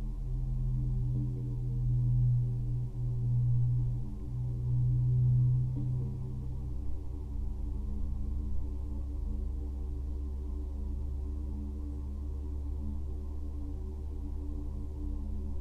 quarry, Marušići, Croatia - void voices - stony chambers of exploitation - borehole